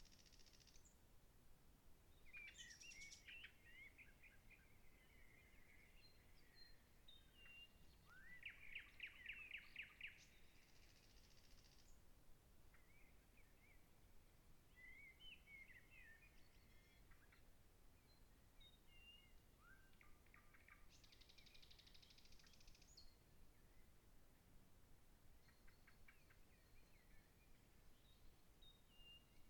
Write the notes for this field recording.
Bird calls from the trees on Nötö in the night, around 23:30, with the sun barely gone down.